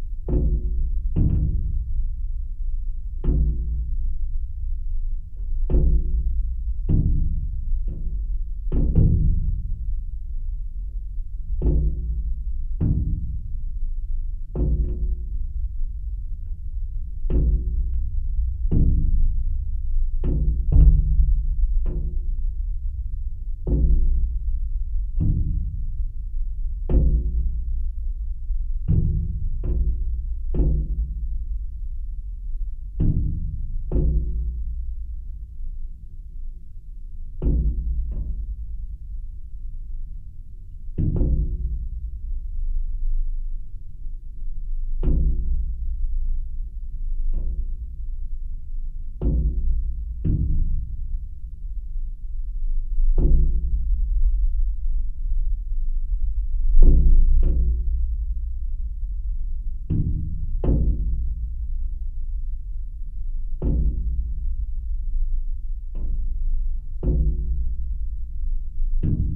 Alausai, Lithuania, heavy rain drops
Geophone on a metalic fragment of window in abandoned building. Rain drops falling...
Utenos apskritis, Lietuva